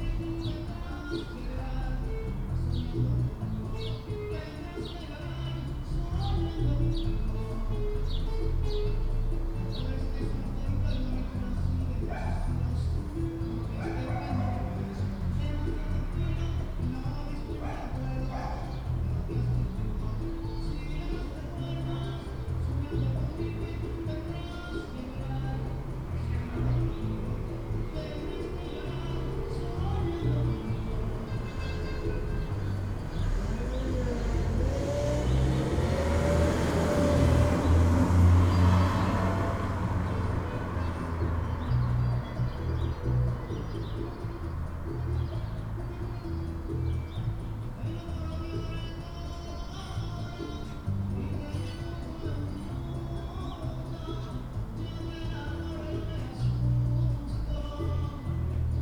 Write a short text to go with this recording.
I made this recording at my front window, listening out to the neighborhood on a sunny and warm Saturday afternoon in April. People are starting to come back to life in the neighborhood. Someone playing music down the street with a peculiar reverberation. Traffic including cars, skateboards, motorcycles, people walking. The hounds down the street barking. The motorcycle at 1:30 is loud and distorted, totally clipped. I left it in because it portrays the feeling accurately. Recorded with Olympus LS-10 and LOM mikroUši